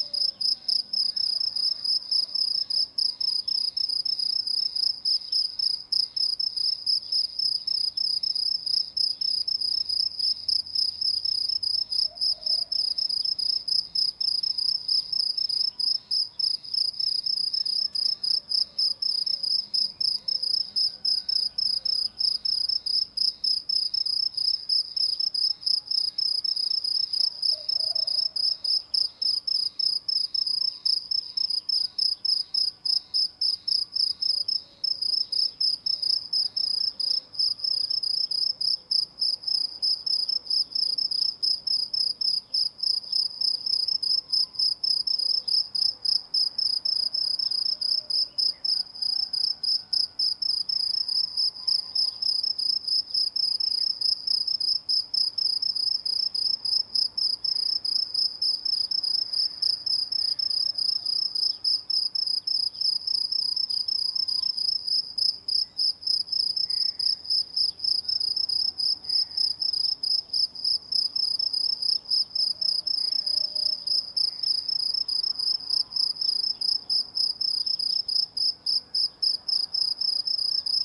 Morning sounds on the road to Goynuk Canyon.
Recorded with Zoom H2n